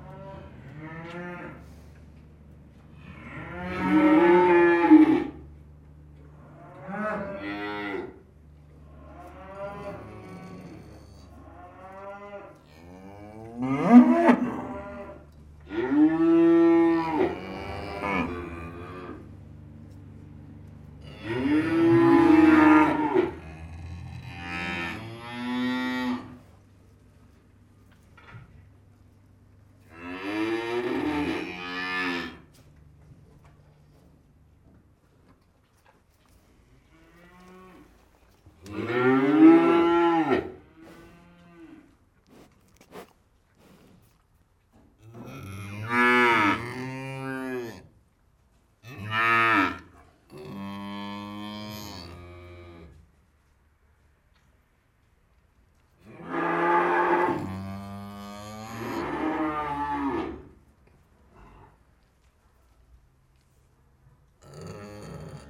Court-St.-Étienne, Belgique - Cow crisis
Veals are separated from cows. This makes a mega cow crisis. A veal is crying so much that it losts voice. This makes a monstruous bear sound, grouar ! Thanks to Didier Ryckbosch welcoming me in the farm.